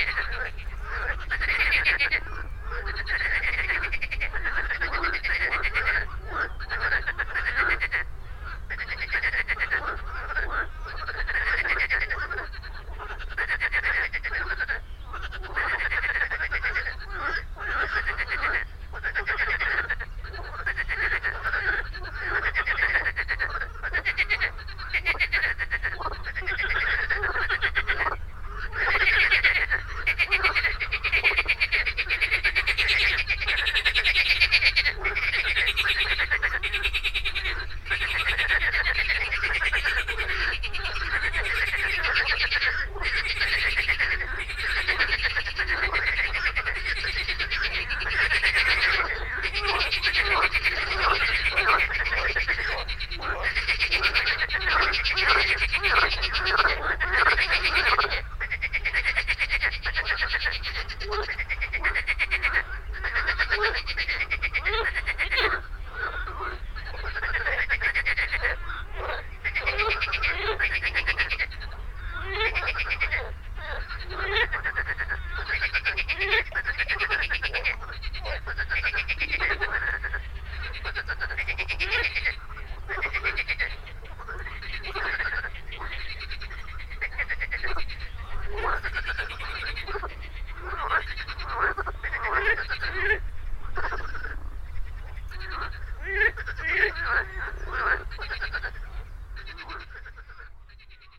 Entrelacs, France - La mare aux grenouilles.
Dans la forêt domaniale de la Deysse près d'Albens, les grenouilles en folie. Enregistreur Teac Tascam DAP1, extrait d'un CDR gravé en 2003.
France métropolitaine, France, 3 April 2003, 10:30